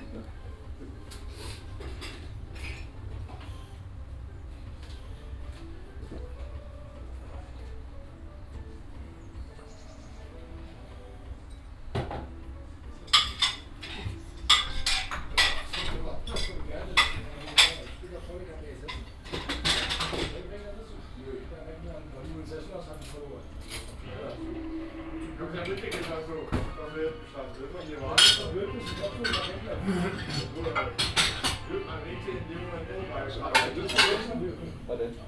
small pub at s-bahn station Plänterwald, a bit depresive atmosphere here. the station seems to be out of service.
Berlin, Deutschland, 9 October